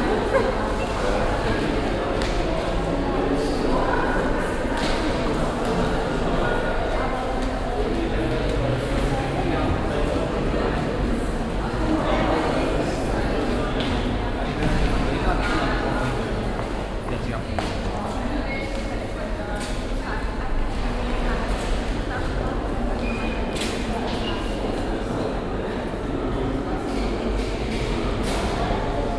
cologne, claudiusstr, fachhochschule
soundmap: köln/ nrw
atmo, stimmen, schritte, türen fachhochschule claudiusstr, kurz aussen dann innen, morgens
project: social ambiences/ listen to the people - in & outdoor nearfield recordings
29 May, ~22:00